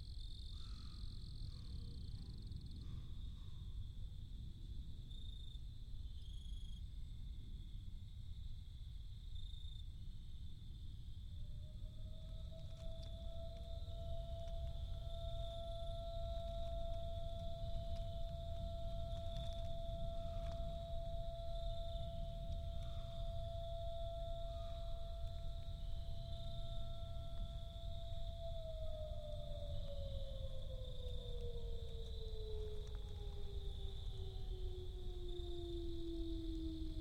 A swamp setting provides a mixture of forest sounds (a bird of some sort seems to peck at my setup halfway through) and manmade intrusions (aircraft and a siren). Location: Bear Swamp